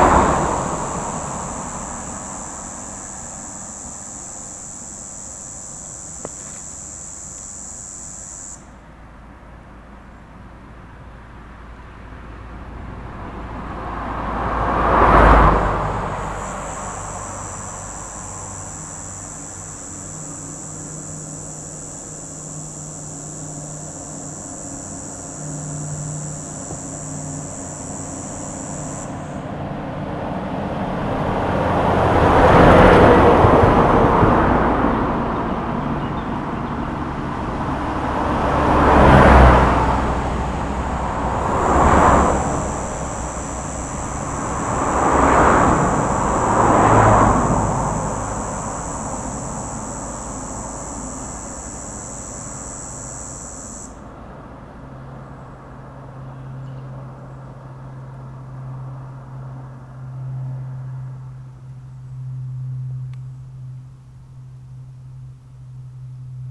Rte d'Aix, Chindrieux, France - cigales dans les platanes
Dans la côte de Groisin quelques cigales se manifestent dans les platanes, au milieu de la circulation routière.